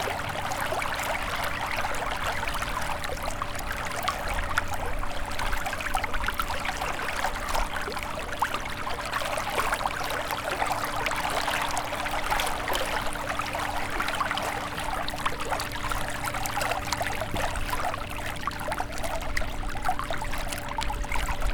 Edersee, Fahrt mit einem Elektroboot
Germany, 7 August 2010